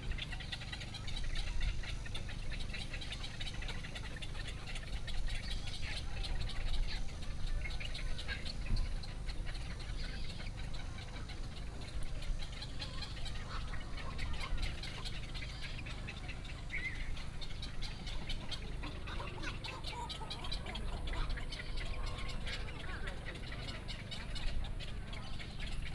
梅花湖, Dongshan Township - Birds and Duck
Tourist, Tourist Scenic Area, At the lake, Birdsong, Duck calls, White egrets
Sony PCM D50+ Soundman OKM II